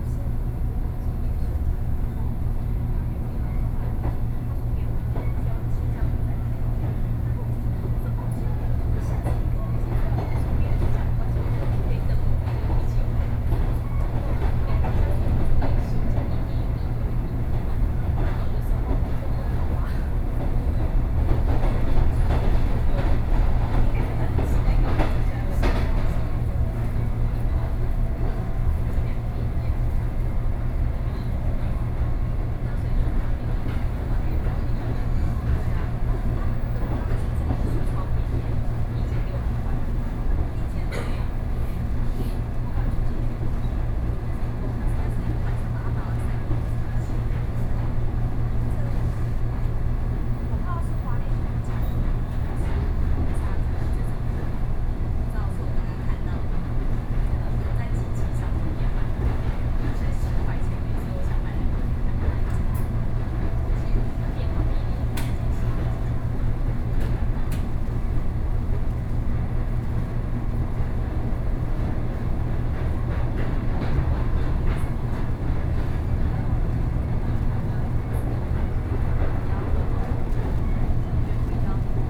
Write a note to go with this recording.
from Puxin Station to Yangmei Station, Sony PCM D50+ Soundman OKM II